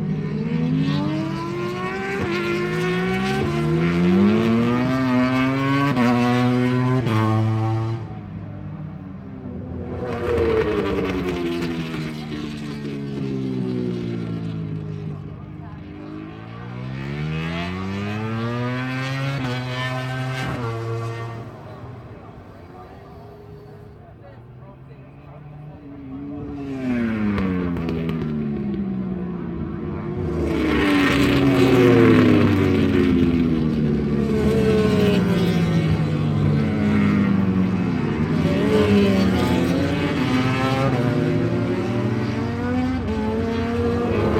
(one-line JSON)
{"title": "Donington Park Circuit, Derby, United Kingdom - British Motorcycle Grand Prix 2004 ... free practice ...", "date": "2004-07-24 10:25:00", "description": "British Motorcycle Grand Prix 2004 ... free practice part two ... one point stereo mic to minidisk ...", "latitude": "52.83", "longitude": "-1.38", "altitude": "94", "timezone": "Europe/London"}